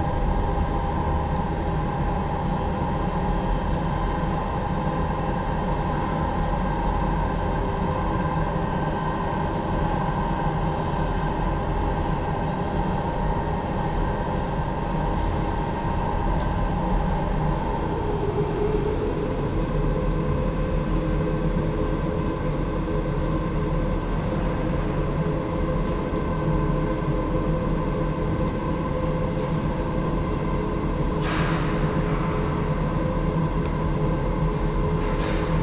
ambience within Schlossberg hill, at the elevator station
(PCM D-50, DPA4060)
Graz, Austria, 2 September 2012